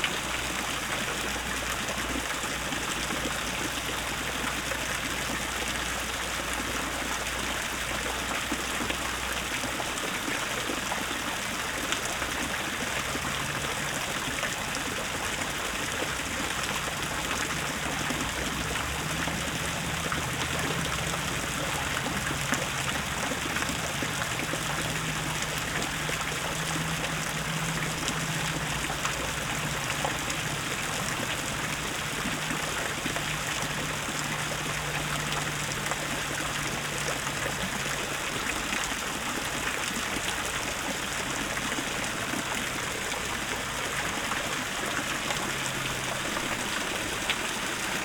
Märchenbrunnen (fairy tale fountain) at Von-der-Schulenburg-Park, Neukölln, Berlin
23 August 2012, ~20:00